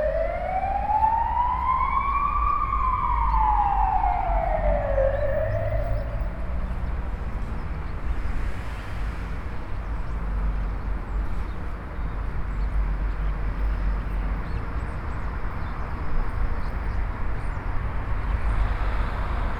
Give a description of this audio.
Blackbird and Siren, Altitude 100, Brussels. Merle et Sirène à l'Altitude 100.